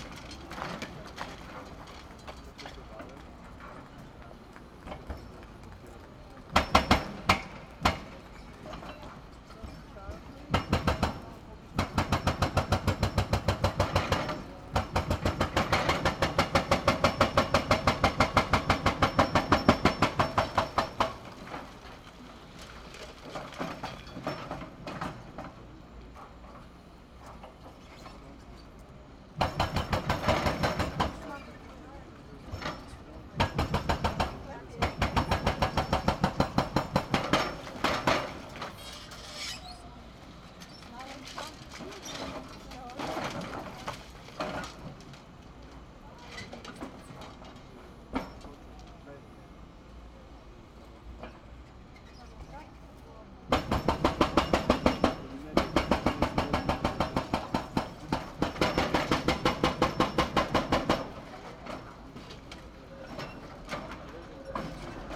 Poznan, Jezyce district - demolition site
an excavator with a pneumatic hammer crushing a concrete wall. trams rolling nearby.